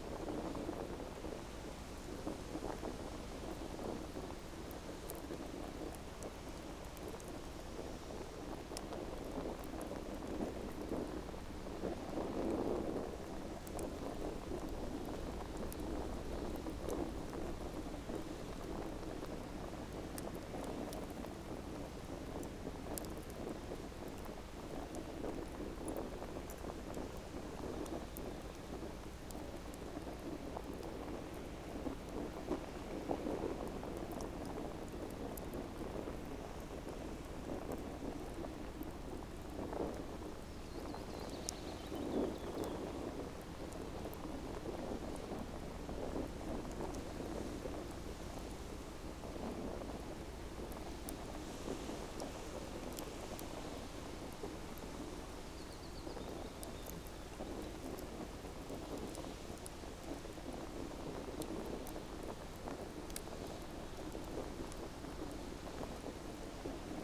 Warm and windy day, sitting by a campfire. Zoom H5, default X/Y module.